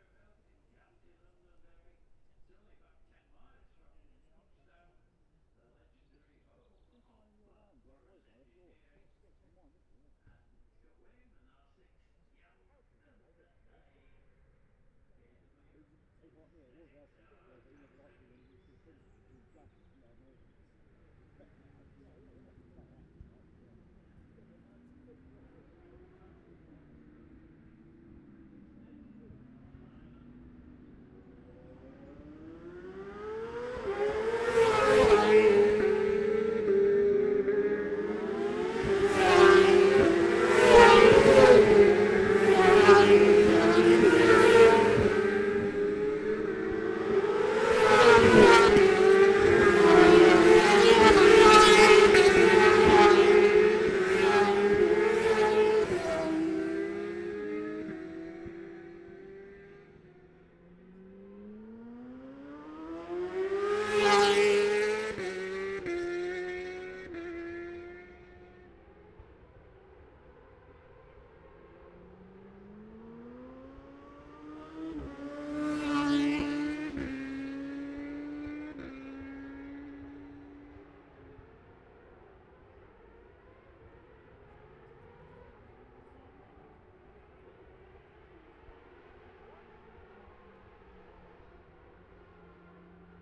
Jacksons Ln, Scarborough, UK - olivers mount road racing 2021 ...
bob smith spring cup ... 600cc Group A qualifying ... luhd pm-01 mics to zoom h5 ...